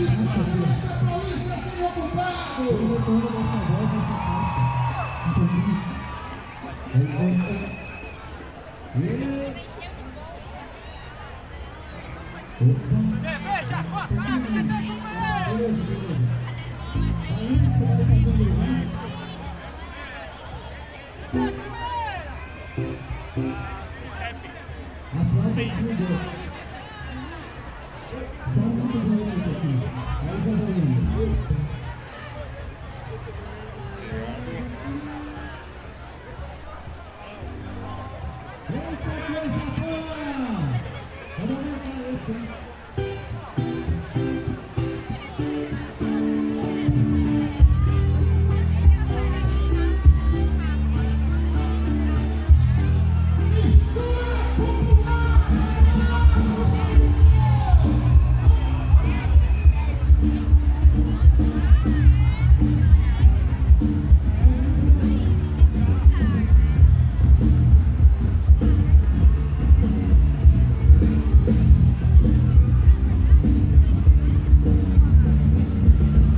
Gravação da Manifestação 15M - Contra Cortes na Educação Pública Brasileira e contra a Reforma da Previdência. Gravação do momento em que os manifestantes ocupam a avenida Paulista. Gravado com Zoom H4n - Mics internos - 120°
Reconding of 15M Public act against expense cut in education proposed by Jair Bolsonaro and against the Social Security Reform presented by Paulo Guedes. Recording of the moment of occupation of the avenue by the protesters. Recorded on Zoom H4n - Internal Mics - 120º